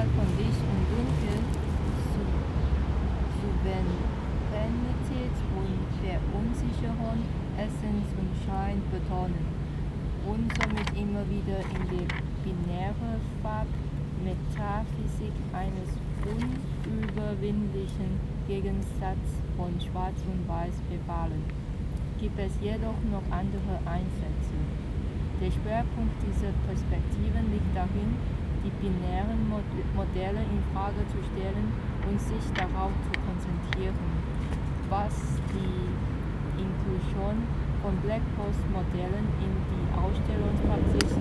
{"title": "Str. des 17. Juni, Berlin, Deutschland - Lesegruppedecolbln XI", "date": "2018-07-04 15:30:00", "description": "The reading group \"Lesegruppedecolbln\" reads texts dealing with colonialism and its consequences in public space. The places where the group reads are places of colonial heritage in Berlin. The text from the book \"Myths, Masks and Themes\" by Peggy Pieshe was read at the monument of Frederick I and Sophie Charlotte, who stands in colonial politics and the slave trade next to a 3-lane road.", "latitude": "52.51", "longitude": "13.33", "altitude": "37", "timezone": "Europe/Berlin"}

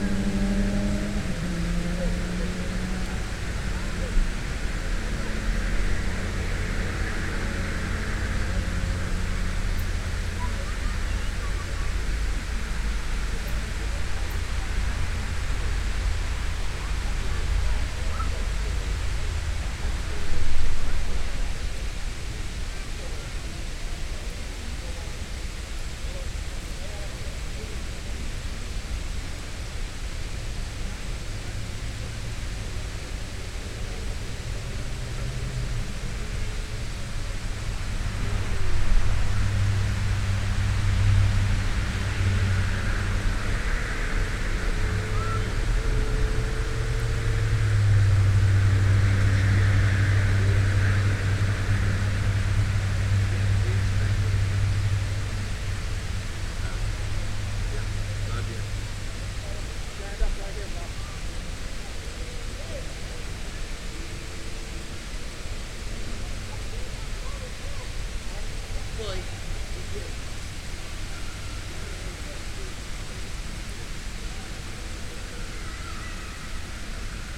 {"title": "Seven Lakes Dr, Tuxedo, NY, USA - Lake Skannatati - General Ambience", "date": "2021-08-25 14:44:00", "description": "The ambience surrounding Lake Skannatati. Harriman State Park. Many sounds are heard: water running, visitors chatting, bees, cicadas, and road noise.\n[Tascam DR-100mkiii & Primo EM-272 omni mics]", "latitude": "41.24", "longitude": "-74.10", "altitude": "283", "timezone": "America/New_York"}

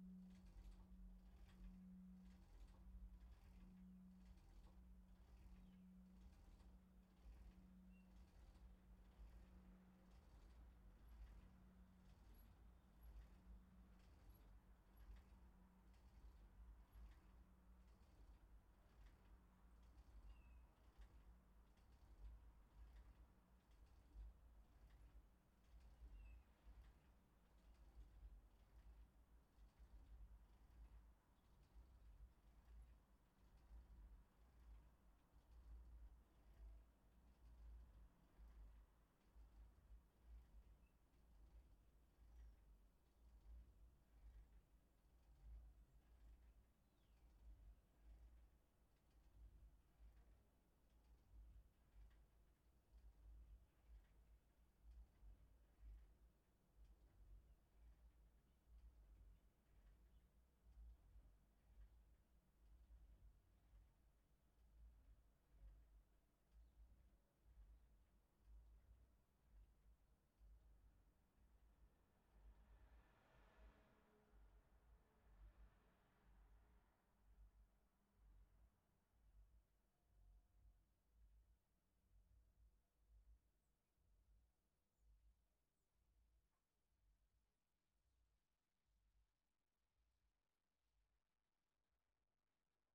{"title": "l'Église, Pl. de l'Église, Thérouanne, France - église de Therouanne (Pas-de-Calais) - clocher", "date": "2022-03-21 15:00:00", "description": "église de Therouanne (Pas-de-Calais) - clocher\n3 cloches - volées et tintements\ncloche 1 - la plus grave - volée automatisée", "latitude": "50.64", "longitude": "2.26", "altitude": "36", "timezone": "Europe/Paris"}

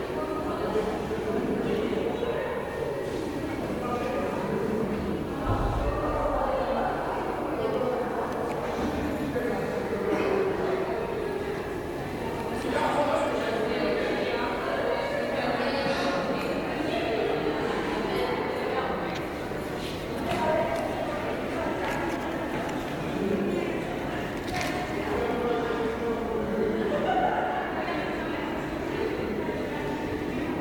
{"title": "Kazimierza Pulaskiego, Szczecin, Poland", "date": "2010-10-29 11:52:00", "description": "Ambiance of corridor in the library.", "latitude": "53.42", "longitude": "14.54", "altitude": "24", "timezone": "Europe/Warsaw"}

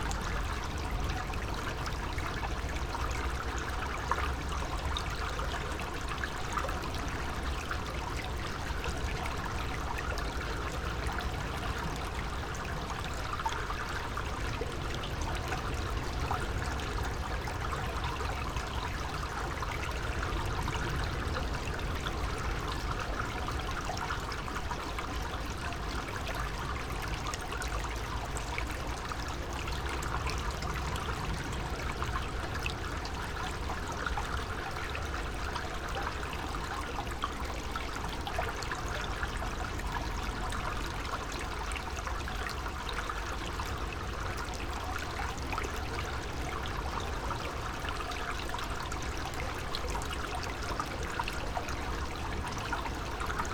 {"title": "Cleveland Way, Whitby, UK - water flowing from a culvert ...", "date": "2019-07-12 10:05:00", "description": "water flowing from a culvert ... SASS ... background noise ... dog walkers etc ...", "latitude": "54.50", "longitude": "-0.64", "timezone": "GMT+1"}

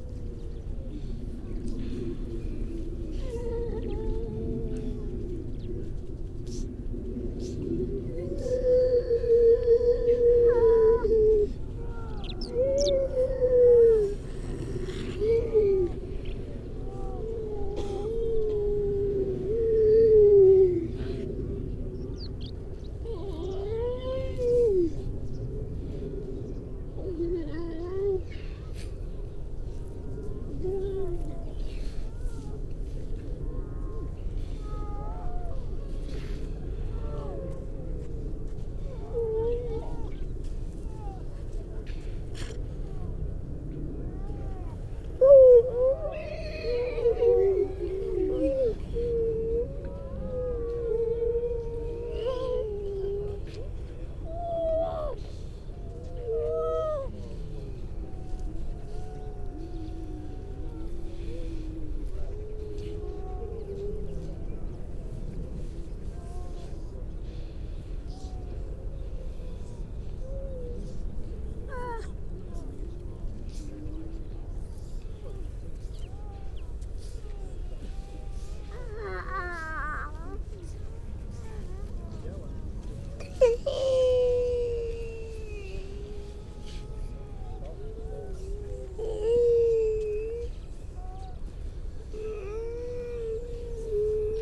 {"title": "Unnamed Road, Louth, UK - grey seals soundscape ...", "date": "2019-12-03 10:25:00", "description": "grey seals soundscape ... mainly females and pups ... parabolic ... bird calls from ... skylark ... chaffinch ... mipit ... starling ... linnet ... crow ... pied wagtail ... all sorts of background noise ...", "latitude": "53.48", "longitude": "0.15", "altitude": "1", "timezone": "Europe/London"}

